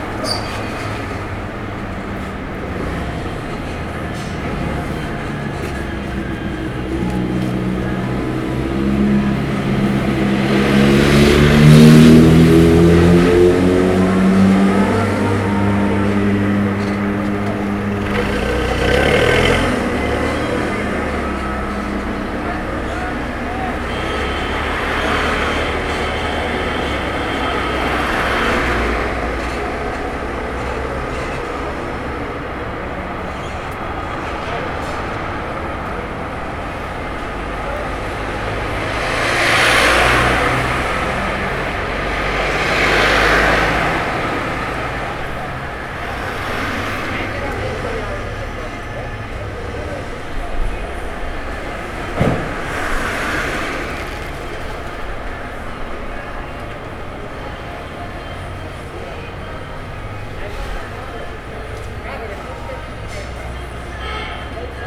Binckhorst Mapping Project: Komeetweg. 12-02-2011/16:15h - Binckhorst Mapping Project: Komeetweg
Binckhorst Mapping Project: Komeetweg
2 December, 16:15